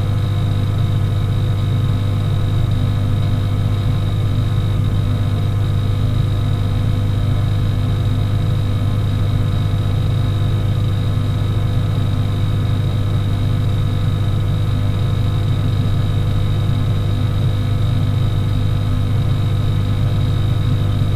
Poznan, Mateckiego str. underground garage - counter
sound of the power consumption counter (roland r-07)